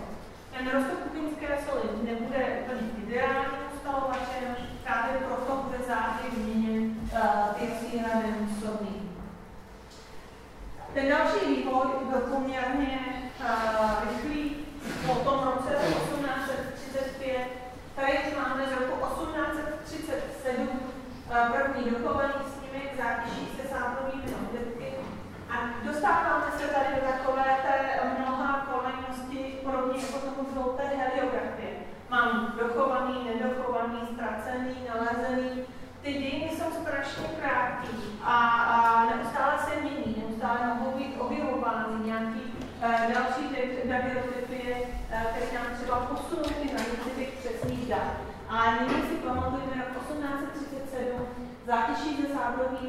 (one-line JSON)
{"title": "Pasteurova, Ústí nad Labem-Ústí nad Labem-město, Česko - Lecture History of Photograph No.1", "date": "2017-10-02 14:00:00", "description": "Lecture. History of photograph_ No.1 Room 420", "latitude": "50.67", "longitude": "14.02", "altitude": "190", "timezone": "Europe/Prague"}